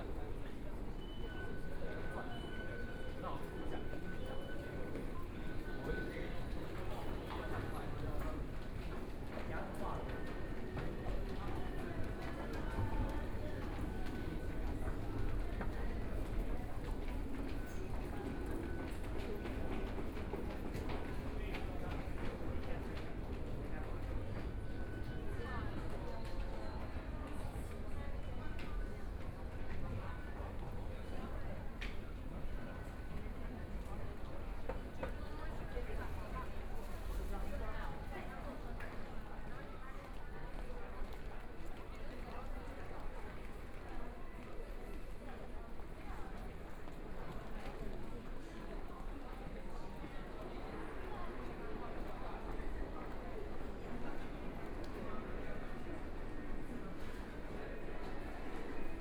Taipei Main Station, Taiwan - walking in the Station
Follow the footsteps, From the underground MRT station to mall, Clammy cloudy, Binaural recordings, Zoom H4n+ Soundman OKM II